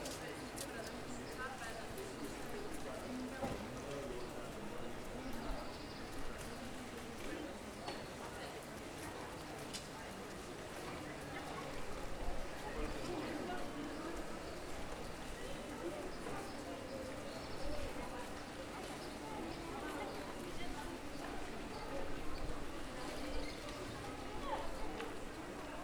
This recording is one of a series of recording mapping the changing soundscape of Saint-Denis (Recorded with the internal microphones of a Tascam DR-40).
Saint-Denis, France, 27 May